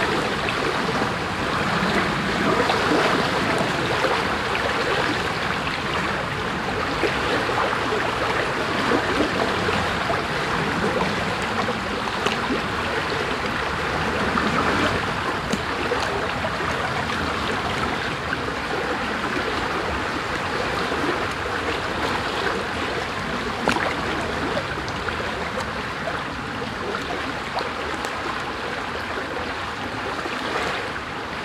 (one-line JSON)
{"title": "Heinerscheid, Luxemburg - Kalborn, river Our", "date": "2012-08-06 11:45:00", "description": "Am Ufer des nach nächtlichem Regens gut gefüllten und aufgewühlten Grenzflusses Our.\nAt the riverside of the border river Our that is well filled with ruffled water after a rainy night.", "latitude": "50.10", "longitude": "6.13", "altitude": "319", "timezone": "Europe/Berlin"}